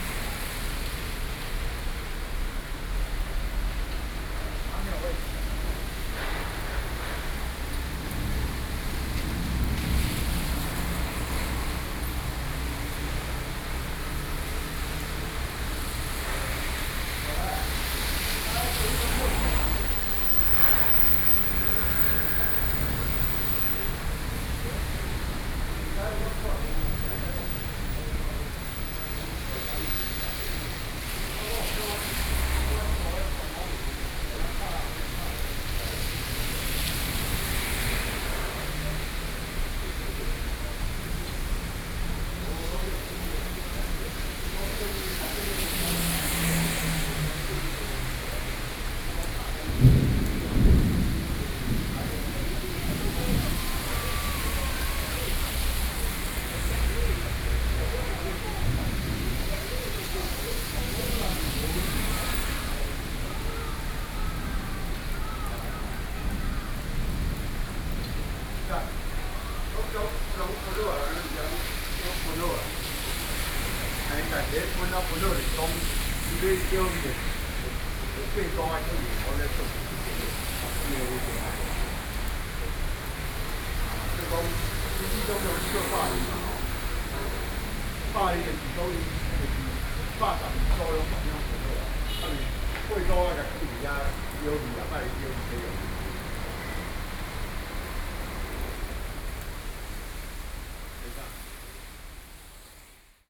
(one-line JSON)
{"title": "Taipei, Taiwan - Thunderstorm", "date": "2013-07-06 14:44:00", "description": "Traffic Noise, Sound of conversation among workers, Community broadcasting, Sony PCM D50, Binaural recordings", "latitude": "25.07", "longitude": "121.53", "altitude": "13", "timezone": "Asia/Taipei"}